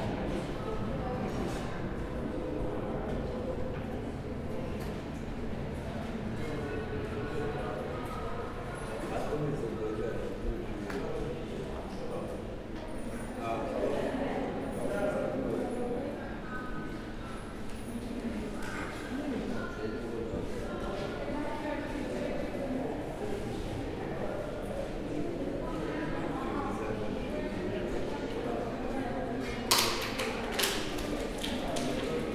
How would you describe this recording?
not very busy place due to construction. travelers buying tickets, magazines, bottled water, coffee from vending machines. a homeless person trash talking at pigeons. the noise at the begging is the sound a ice cream freezer